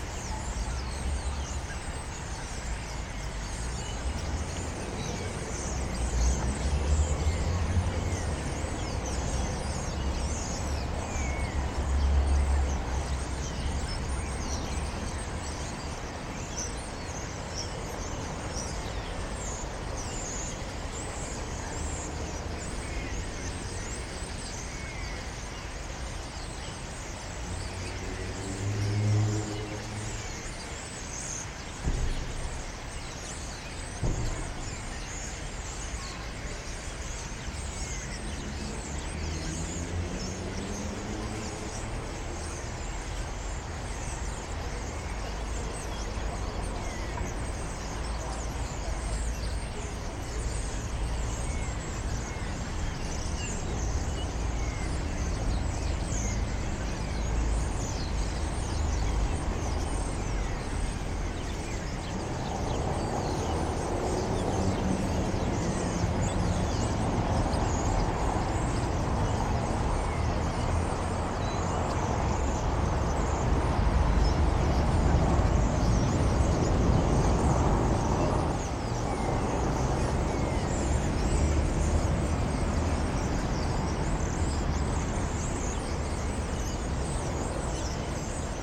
Berlin Dresdener Str, Waldemarstr. - starlings on construction cranes
100s of starlings on the cranes, from another perspective, a few steps back. also sounds from the surrounding houses, saturday early evening.